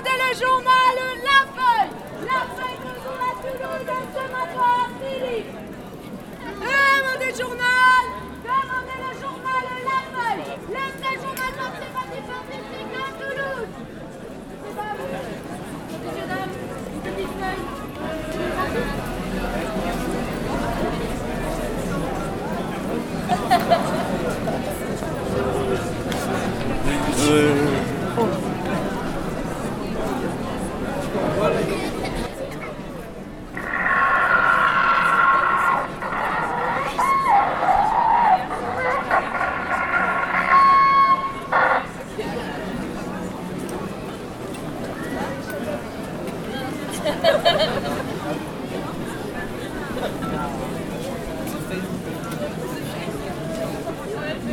Saint - Aubin - Dupuy, Toulouse, France - Saint Aubin outdoor Market, on sunday.
Outdoor maket of Saint Aubin on sunday is a real good place to listen and enjoy. Enjoy the girl who is shouting "Le journal la feuille" ("the newspaper called "la feuille", "The leaf")...a fake newpapers. Many people are walking and looking for something to buy or to eat... Food, jewellery, clothes, and some artistic objets are there. It's a just a pleasure for listeners and walkers...
18 March, 12:00